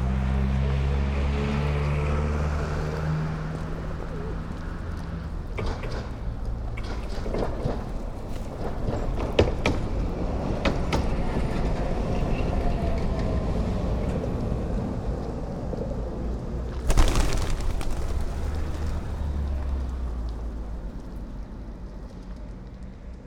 pigeons and trams behind Baltimarket
trams passing by, pigeons and locals walking behin the Baltimarket at Kopli street. (jaak sova)
Tallinn, Estonia, April 2011